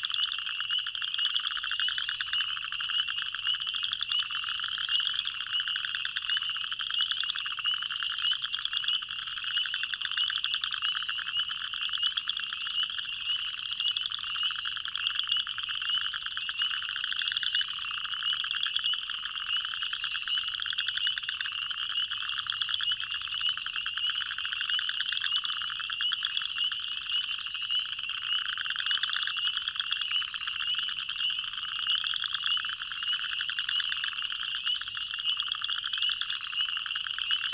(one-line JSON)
{"title": "Lunsford Corner, Lake Maumelle, Arkansas, USA - Cricket frogs and spring peepers near Lake Maumelle, March 18, 2020", "date": "2020-03-18 19:45:00", "description": "March 18, 2020... recorded ~ 7:45 pm on warm evening after many days of rain, near Lake Maumelle, central Arkansas, USA. Cricket frogs and spring peepers are the main frog calls. Recorded using old Telinga microphones (obsolete) and a Sony D50 recorder.", "latitude": "34.91", "longitude": "-92.55", "altitude": "106", "timezone": "America/Chicago"}